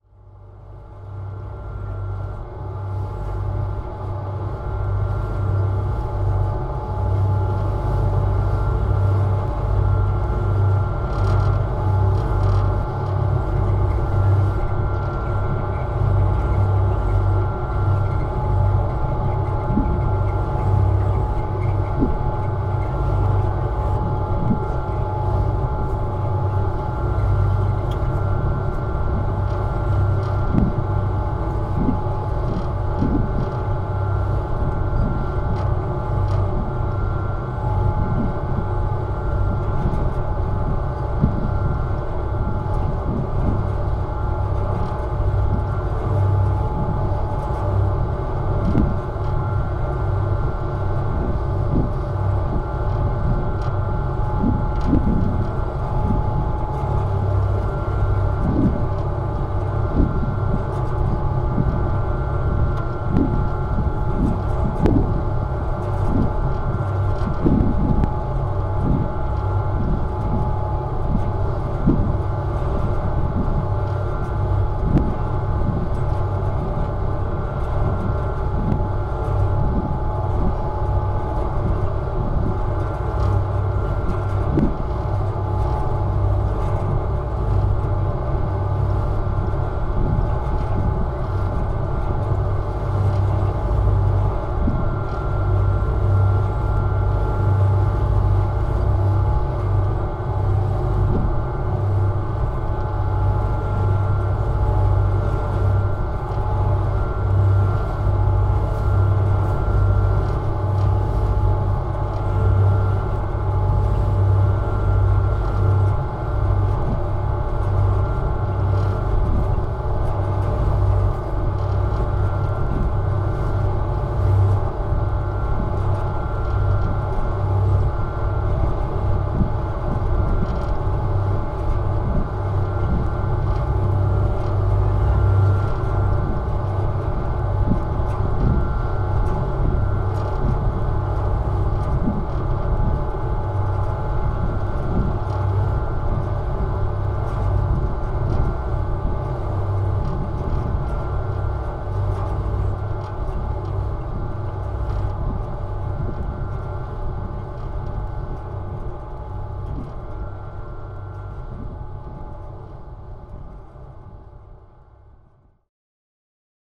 Brooklyn, NY, USA - NYC Ferry, Rockaway Beach to Manhattan
Drone sound of NYC Ferry's engine.
Zoom h6 + contact mic
NYC, New York, USA, 12 July